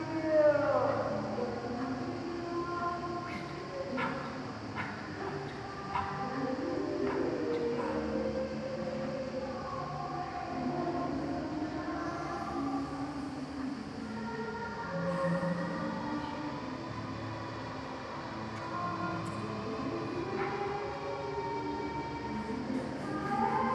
Venedig, Italien - Venice Biennale - British Pavillion
At the Venice Biennale 2022 inside the british pavillion. The sound of black female voices in an installation work " Feeling her way" by Sonia Boyce - feat. Errollyn Wallen, Jacqui Dankworth, Poppy Ajudha, Sofia Jernberg and Tanita Tikaram.
international ambiences
soundscapes and art environments